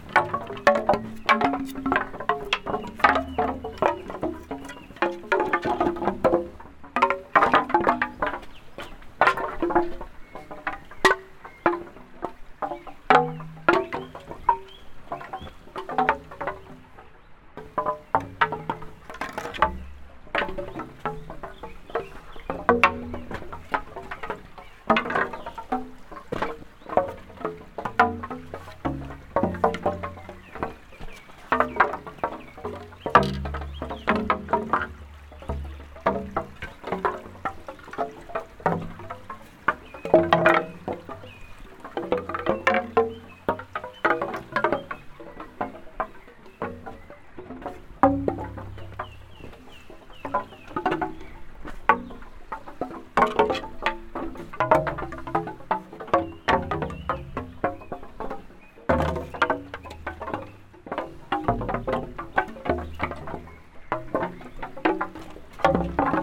At the Hoscheid Klangwanderweg - sentier sonore. A Sound object by Alan Johnston entitled Choeur de la foret. The object looks a little like a wooden shrine. A very basic construction seated close to the small stream that crosses the valley. At the ceiling of the construction there are several strings attached which hold wooden paddels. Those peddals start to swing in the wind or as you move them by walking thru and as the clash together they make these sounds.
more informations about the Hoscheid Klangwanderweg can be found here:
Projekt - Klangraum Our - topographic field recordings, sound art objects and social ambiences
Luxembourg, 3 June 2011, 19:18